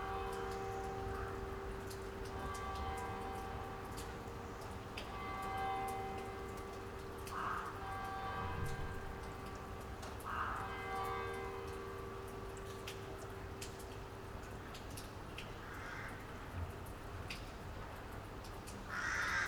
Sachsenstr., Karlsruhe, Deutschland - morning backyard ambience with crows
lots of crows gather in the morning on the roofs, weekend morning ambience in a backyard, raindrops
(Sony PCM D50)
Karlsruhe, Germany